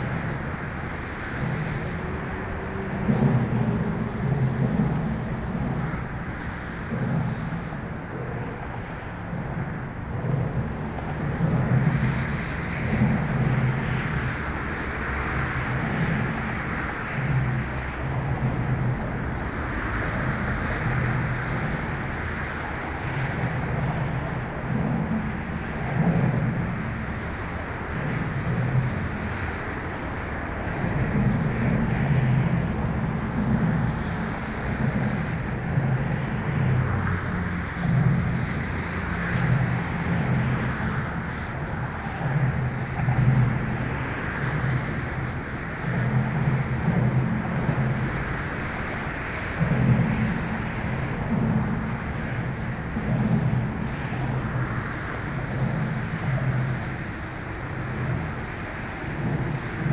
berlin, baumschulenweg: neben autobahnbrücke - borderline: berlin wall trail, close to highway bridge
traffic noise close to the bridge
borderline: september 24, 2011
Berlin, Germany